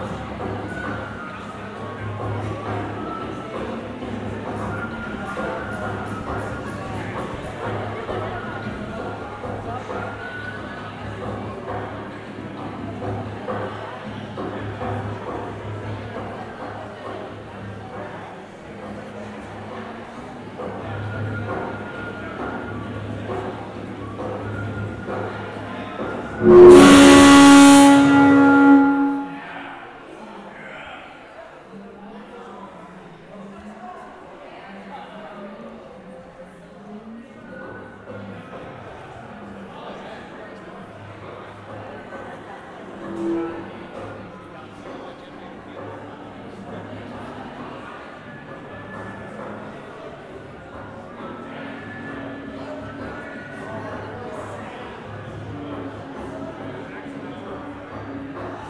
vir2Ual3 - Vir2Ual Aporee in background